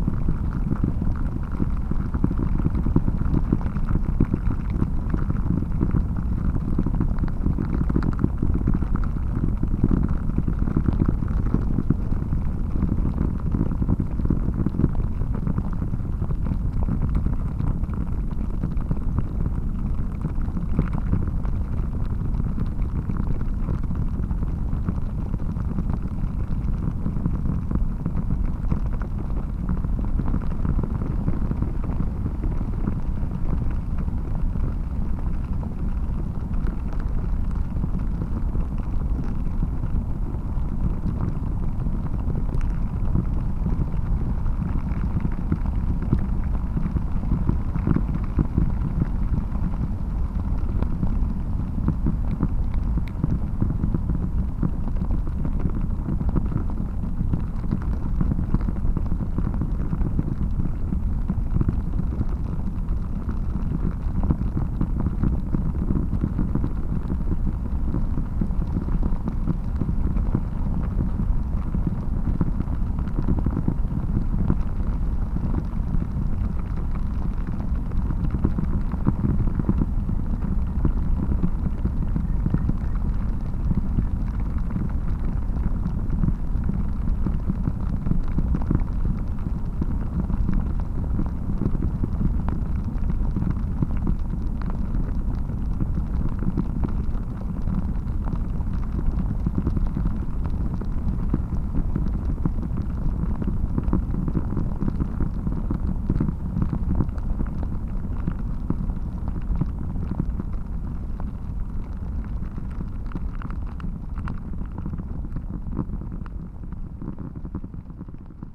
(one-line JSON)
{"title": "Andreikėnai, Lithuania, water spring", "date": "2022-09-05 15:00:00", "description": "HYdrophone was buried in sand and stones near spring to discower low rumble of water running in the ground", "latitude": "55.39", "longitude": "25.62", "altitude": "157", "timezone": "Europe/Vilnius"}